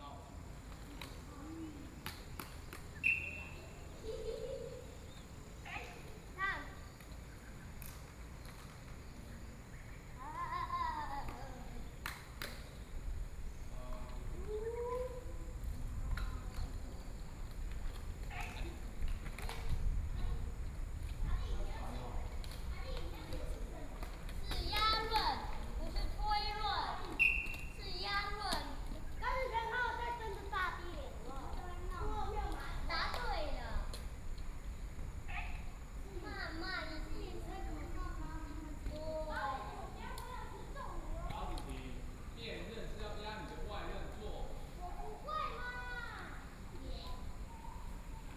大同鄉崙埤村, Yilan County - Children and teachers
Children and teachers, Children are learning inline wheels, Traffic Sound, At the roadside
Sony PCM D50+ Soundman OKM II